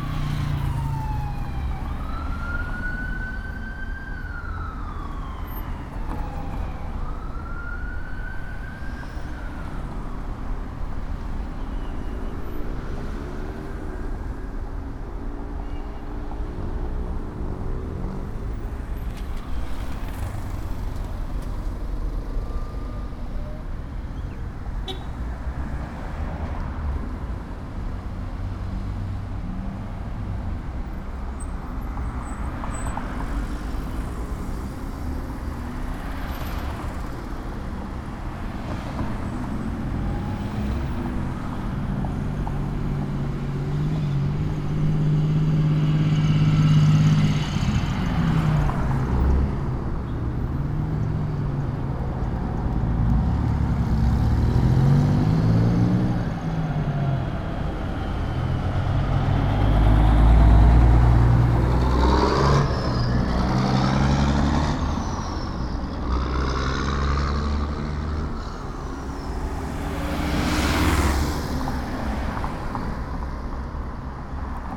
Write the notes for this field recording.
Traffic on Avenida Las Torres after two years of recording during COVID-19 in phase 2 in León, Guanajuato. Mexico. Outside the Suzuki car dealership. I made this recording on june 9th, 2022, at 5:48 p.m. I used a Tascam DR-05X with its built-in microphones and a Tascam WS-11 windshield. Original Recording: Type: Stereo, Esta grabación la hice el 9 de abril 2022 a las 17:48 horas.